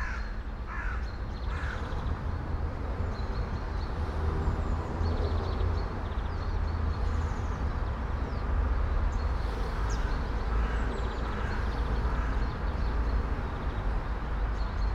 all the mornings of the ... - mar 4 2013 mon
Maribor, Slovenia, 4 March 2013, ~07:00